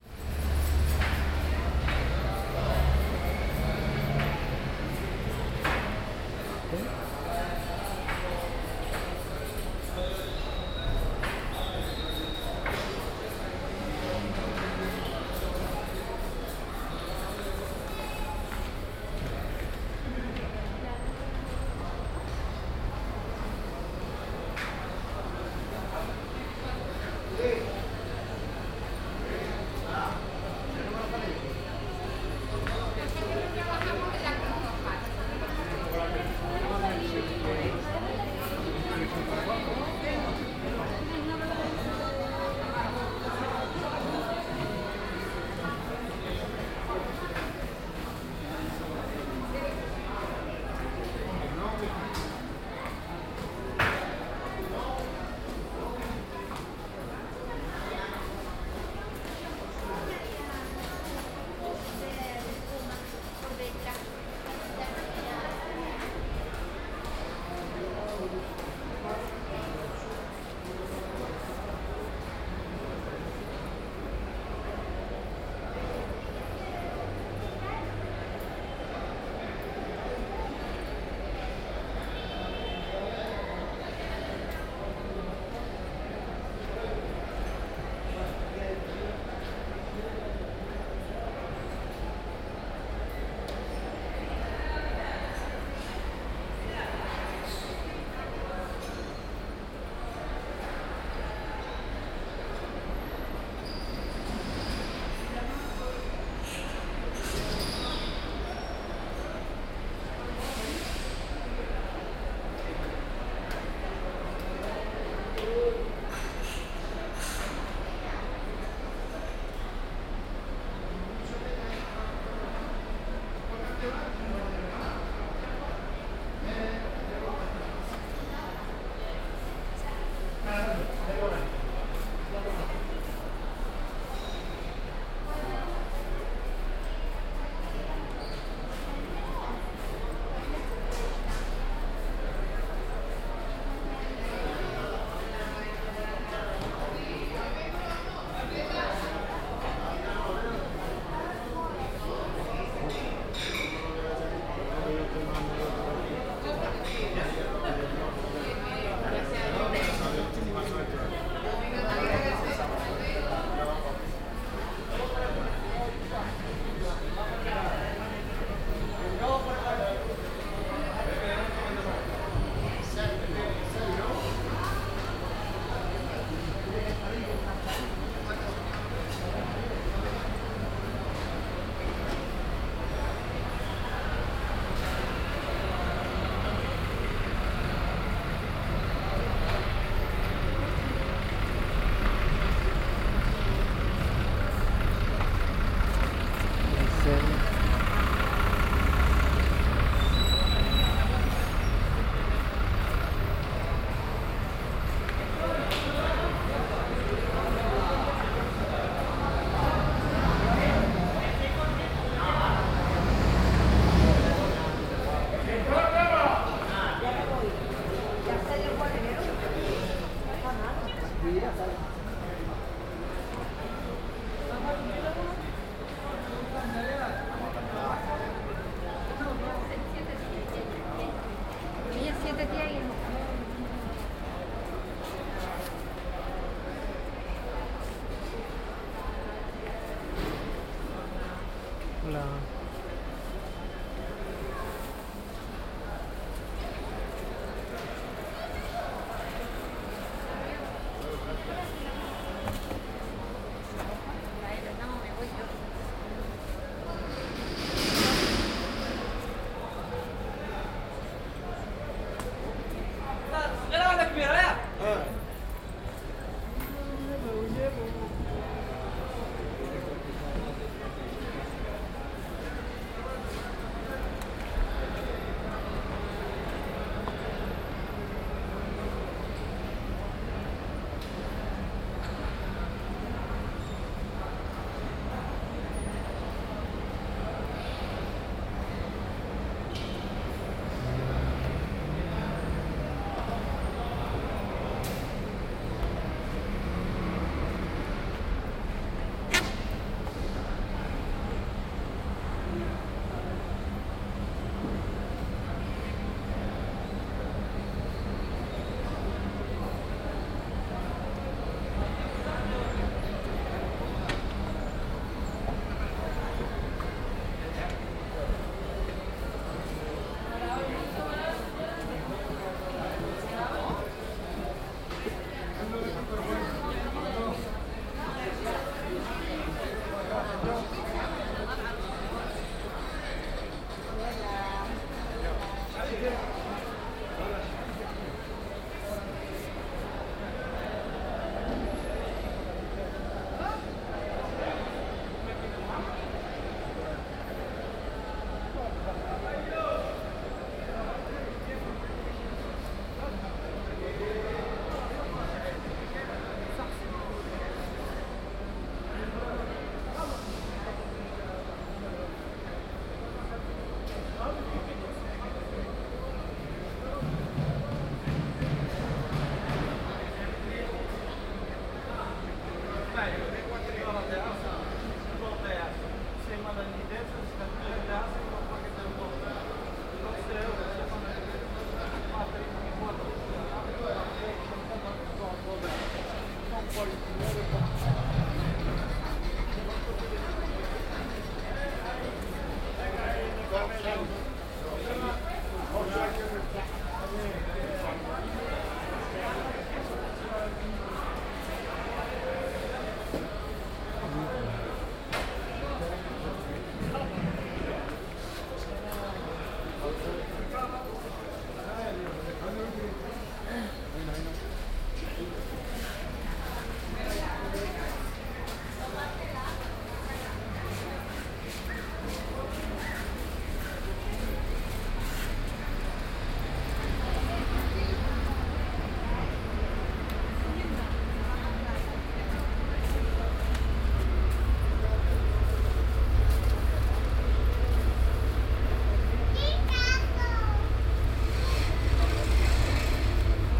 mercado de ceuta, binaural recording listen with headphones!
mercado de ceuta, market in ceuta, centro, cente, binaural recording, comercio, carniceria, cafe, patio, paseo
Ceuta, Spain, 15 July, 15:33